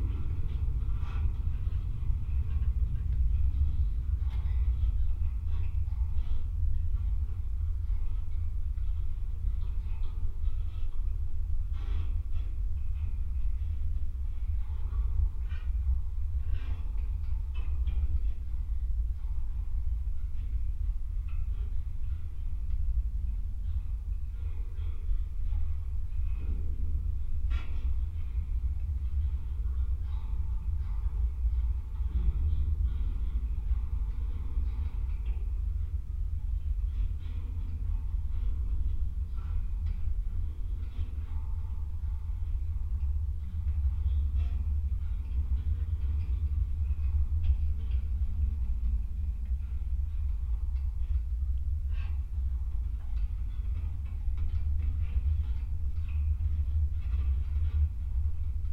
Unnamed Road, Lithuania, fence drone
metallic fence quarding falloow deers territory. a pair of diy contact microphones.